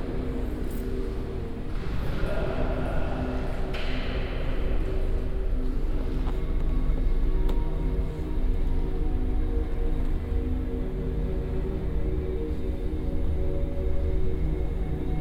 Inside the patio of the abbeye neumünster which is covered by a huge glass roof. The sound of people and transportation waggons passing the patio, the burst of a snooze and the sound of a vowel sound installation by musikaktionen. Recorded during the science festival 2011.
international city scapes - topographic field recordings and social ambiences